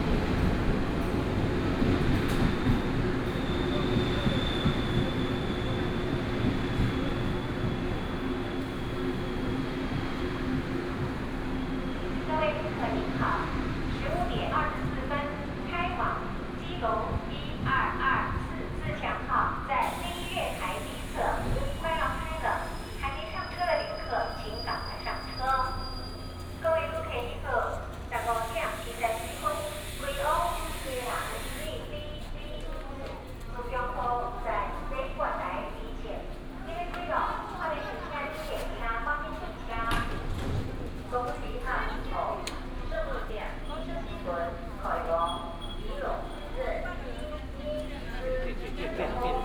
竹南火車站, Zhunan Township - in the station platform
in the station platform, Station information broadcast, The train passes by
Miaoli County, Taiwan, 9 March 2017